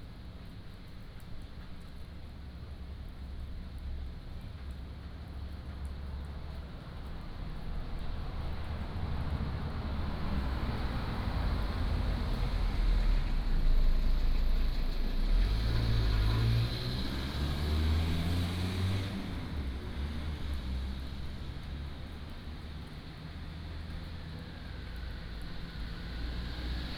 Small village, Rain, Traffic Sound